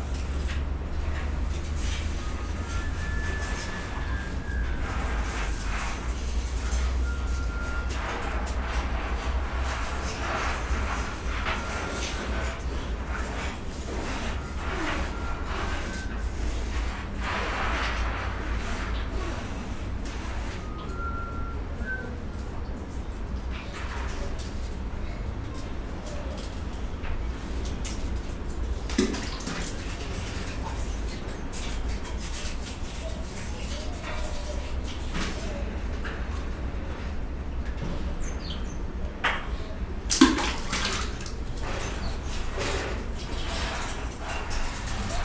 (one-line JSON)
{"title": "water drain action at Santralistanbul campus", "date": "2010-03-01 17:47:00", "description": "playing a water drain during the new maps of time workshop", "latitude": "41.07", "longitude": "28.94", "altitude": "3", "timezone": "Europe/Tallinn"}